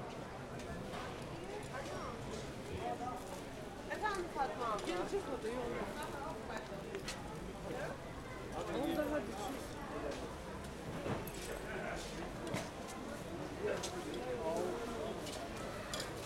samstag, markttag, ein ort der gerüchte, die sich unter dem dach sammeln.
Hamburg, Germany, 1 November 2009, ~11:00